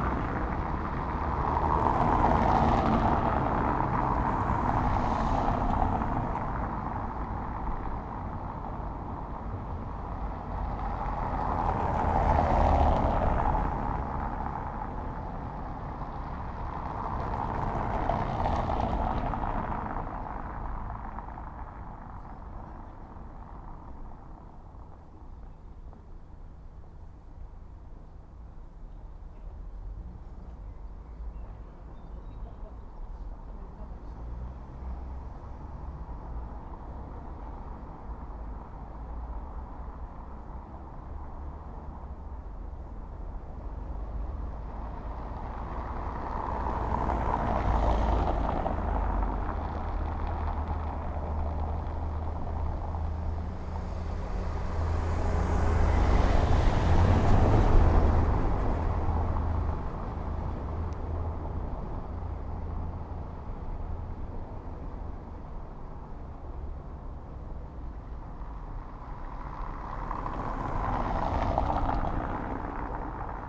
On the corner of Eyre Place and Eyre Crescent, Edinburgh, Edinburgh, UK - Cobblestones and Clock
Walking around Edinburgh I noticed the partciular sound produced by vehicles driving over the cobblestones, and the noise of the rubber on the tyres. I stopped to record this sound, and towards the end of the recording, very nicely, a clock in a house on the corner struck 12. It is very faint, but I love that now I know - through listening - that the household on the corner has a beautiful clock that sometimes makes a duet with the traffic sounding on the cobblestones.